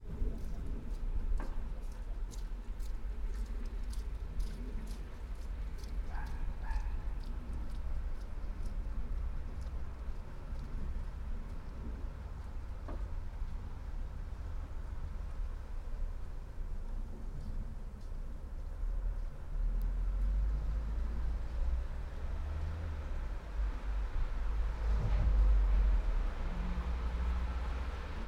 all the mornings of the ... - jan 17 2013 thu